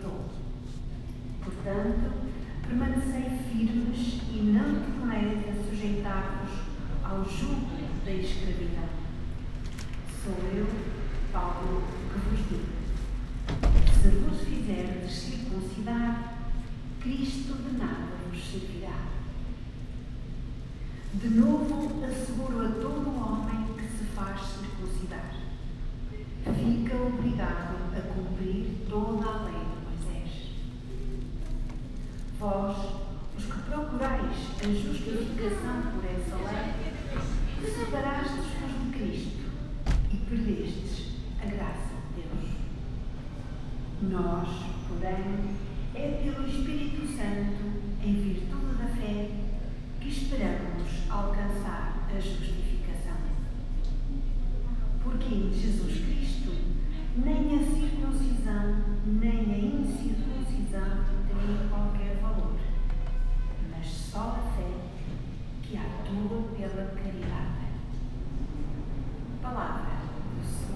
porto, capela das almas - mass celebration
porto, capela das almas, mass celebration